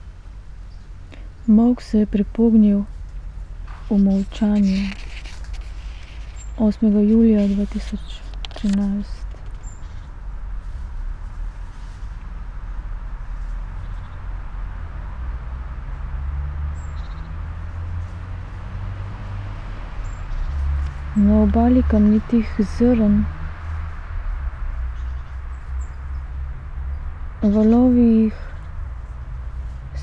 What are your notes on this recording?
6. julij 2013, oblačno jutro sobote, odtenki hladne modrine, kriči lastovic kričijo ... prihajajočo melanholijo, molk se je prepognil v molčanje, 8. julija 2013, na obali kamnitih zrn, valovi jih s penastimi vršički nežno prestavljajo, zrnca peska, trenutki, živeti, dno skodelice, prosojno, tik ob ustih, 5. julij 2013, klepet v daljavi, sonce pod vrhnjim lokom okna, muhice in svetleči prahci, šumenje drevesnih vej, kričijo vse naokrog, v krogih, ti stojiš pod drevesom, veter mu šelesti liste, tihota časa je neopazna, reading fragments of poems